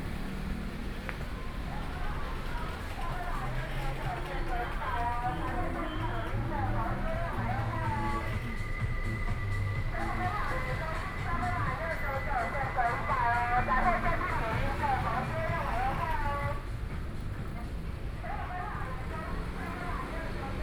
Wufu 4th Rd., Kaohsiung City - walking on the Road
walking on the Road, Various shops sound, Traffic Sound, Convenience Store
Binaural recordings